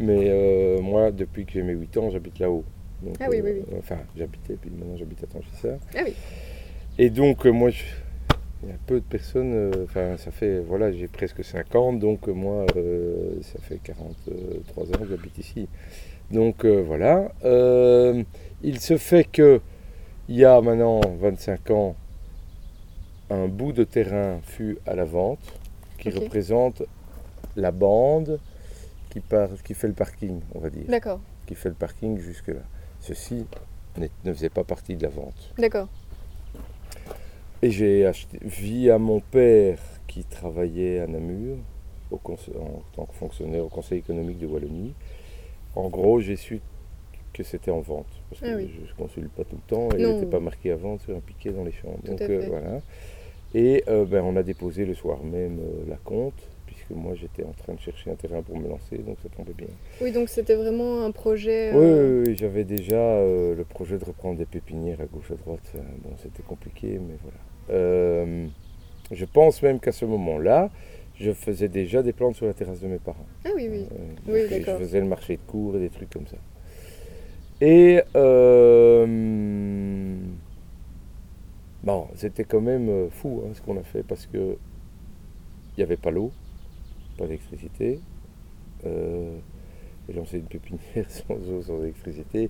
Court-St.-Étienne, Belgique - Hydrangeas cultivator
Thierry de Ryckel speaks about his passion and work. He's an Hydrangeas cultivator. His plant nursery has 30.0000 hydrangeas and hemerocalles.
Court-St.-Étienne, Belgium